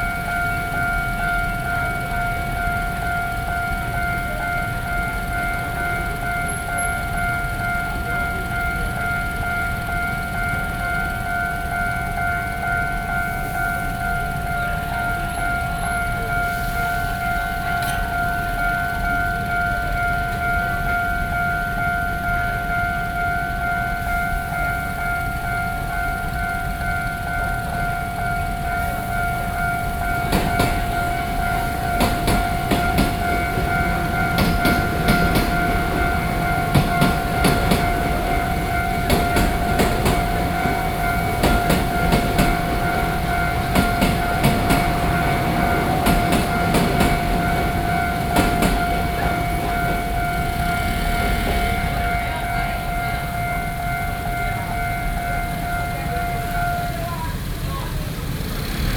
Keelung, Taiwan - Train passes
Traditional market next to the waiting train passes, Binaural recordings